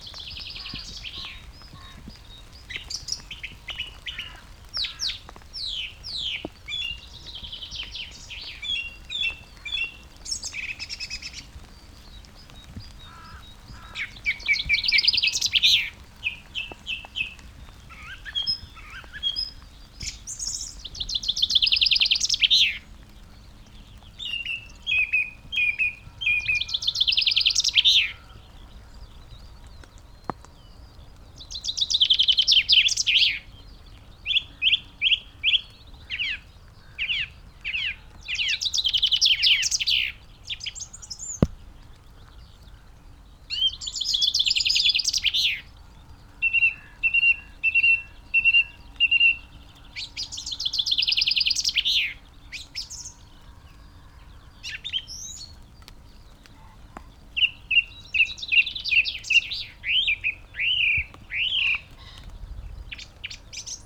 Green Ln, Malton, UK - song thrush in the rain ...
song thrush in the rain ... bird singing ... pre-amped mics in a SASS to LS 14 ... bird calls ... song ... from ... chaffinch ... red-legged partridge ... great tit ... pheasant ... crow ... skylark ... linnet ...